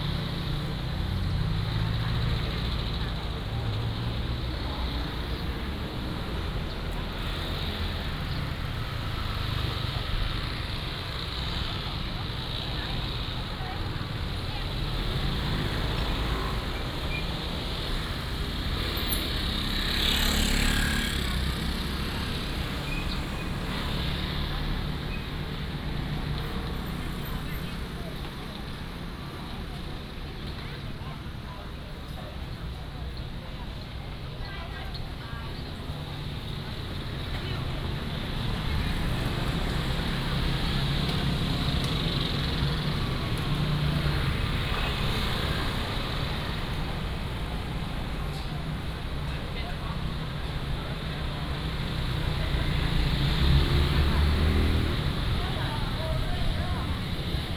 Ln., Zhongzheng Rd., Yuanlin City - Walking on the road
Walk through the market, Traffic sound, Selling voice
Changhua County, Taiwan, January 2017